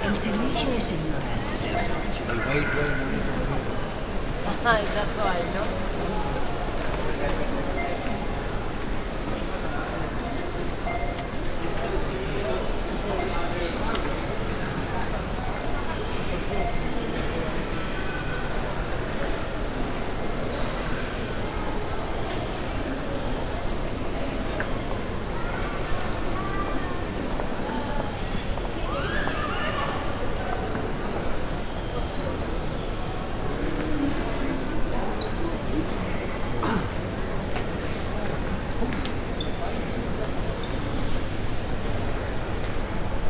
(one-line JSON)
{"title": "dubai, airport, duty free zone", "date": "2011-02-14 14:14:00", "description": "walking inside the airports duty free zone - beeps of the cash machines, people passing by and talking in different languages, an anouncement\ninternational soundmap - social ambiences and topographic field recordings", "latitude": "25.26", "longitude": "55.37", "altitude": "3", "timezone": "Asia/Dubai"}